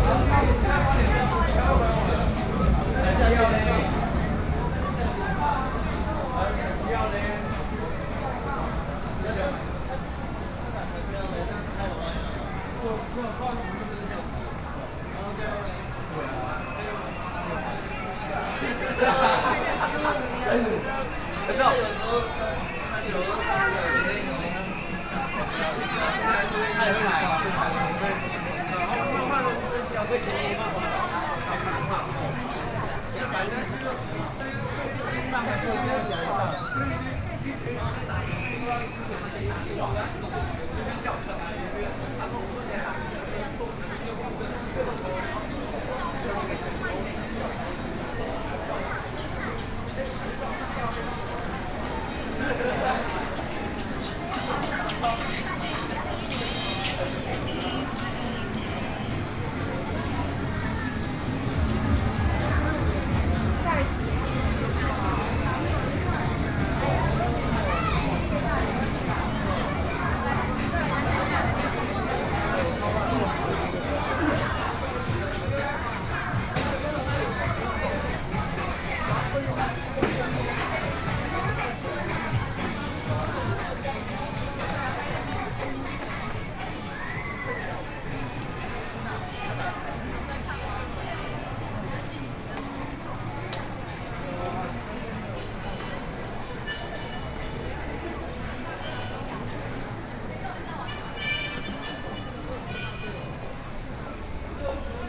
Zhongxiao W. Rd., Taipei - Soundwalk
Underground shopping street ground, from Station to Chongqing S. Rd. Binaural recordings, Sony PCM D50 + Soundman OKM II